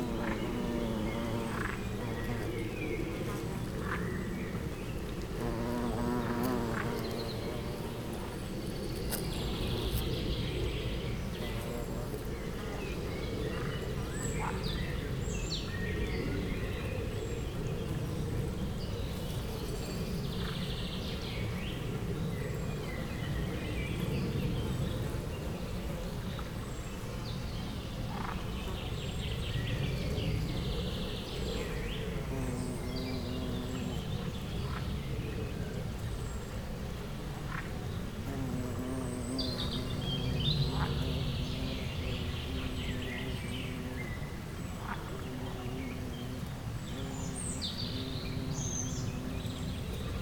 Bruchwald am Gahrenberg, Gutsbezirk Reinhardswald, Deutschland - pond, late afternoon
pond, late afternoon, frogs and bumblebees